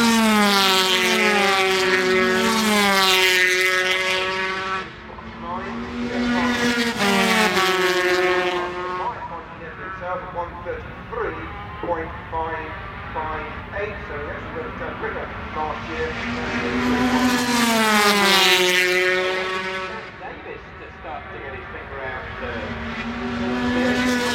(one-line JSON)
{"title": "Castle Donington, UK - British Motorcycle Grand Prix 2003 ... 250 ...", "date": "2003-07-12 15:10:00", "description": "British Motorcycle Grand Prix 2003 ... 250 qualifying ... one point stereo mic to mini-disk ... commentary ... time approx ...", "latitude": "52.83", "longitude": "-1.37", "altitude": "81", "timezone": "Europe/London"}